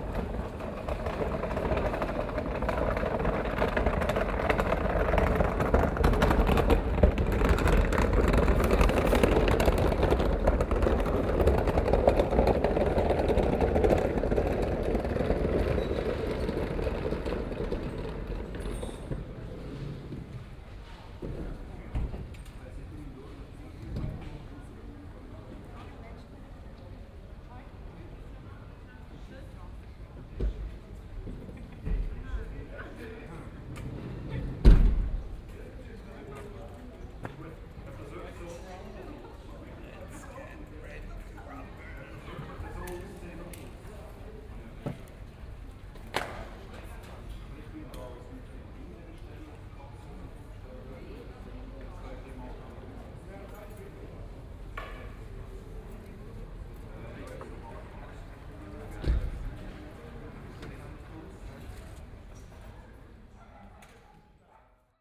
Preperations on the Kirchplatz for the Maienumzug, something rolling over the cobble stones. The bell tolls a quarter past two
Aarau, Switzerland, 30 June 2016